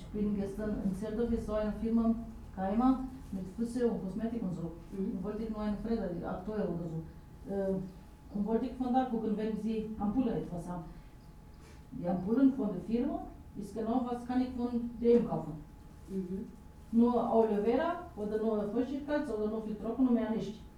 berlin, jahnstraße: fusspflegepraxis - the city, the country & me: pedicure salon
pedicurist talking with her client
the city, the country & me: march 31, 2011
Berlin, Germany, 31 March 2011, 09:49